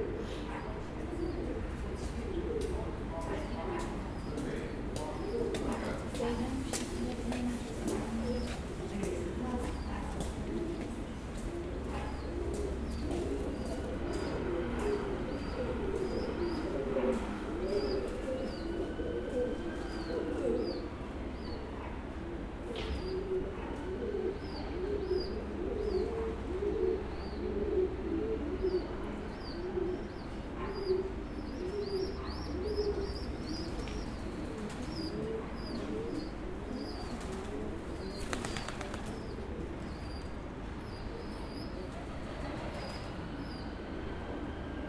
The Constitution, St Pancras Way, London, UK - Regent's Canal towpath near Camden
Regent's Canal towpath, underneath the bridge on Royal College Street near Camden, London. The sound of a boat passing, runners, birds, baby pigeons and distant chatting.